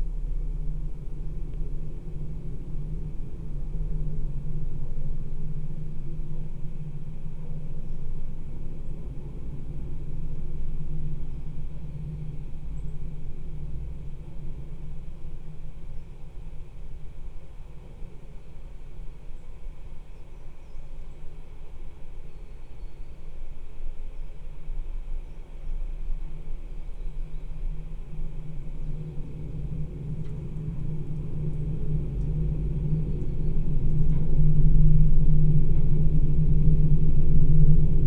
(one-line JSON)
{"title": "Vilkabrukiai, Lithuania, study of a tower in the forest", "date": "2018-09-11 17:20:00", "description": "some old forest firefighters (at least I think so) tower. calm evening. two omni mics for ambience and contact mic for vibration", "latitude": "55.60", "longitude": "25.49", "altitude": "114", "timezone": "Europe/Vilnius"}